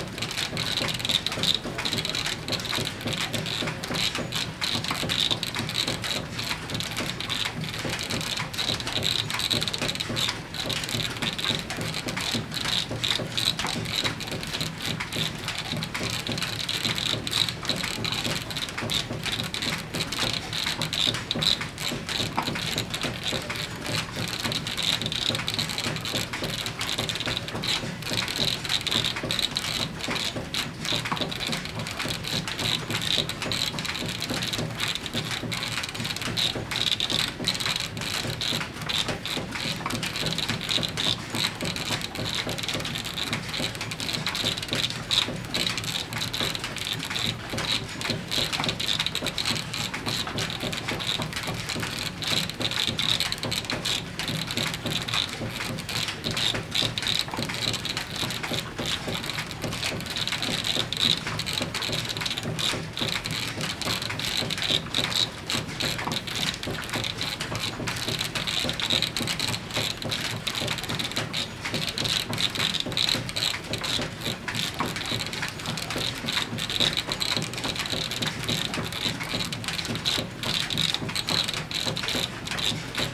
enscherange, rackesmillen, gear drive
Inside the mill at the ground floor. The sound of the central gear drive mechanic. Wooden tooth in a metall construction move textile belts.
Enscherange, Rackesmillen, Zahnradgetriebe
Im Erdgeschoß der Mühle. Die Klänge des zentralen Zahnradgetriebes. Hölzerne Zähne in einer eisernen Konstruktion bewegen Textile Antriebsbänder.
À l’intérieur du moulin, au rez-de-chaussée. Le son du mécanisme à engrenages et courroie. Des dents en bois sur une construction en métal font avancer un tapis textile.
Enscherange, Luxembourg, 23 September 2011, 21:35